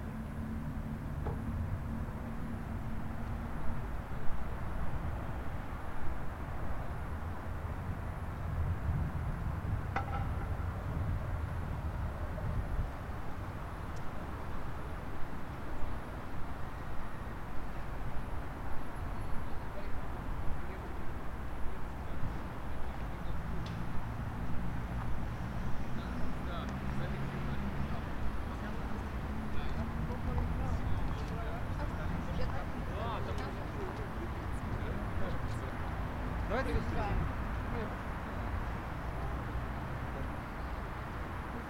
minsk, october square, live forever
Minsk, Belarus, 20 August 2009, 20:32